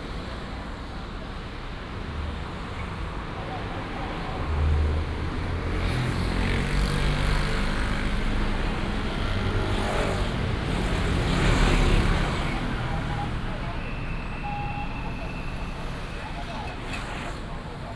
Traditional street, Traffic sound
10 April 2017, ~17:00